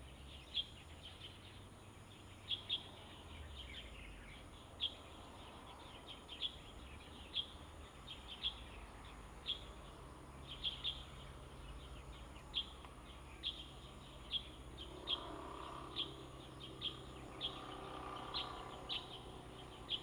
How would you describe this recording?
Birdsong, Traffic Sound, in the Park, Zoom H2n MS+ XY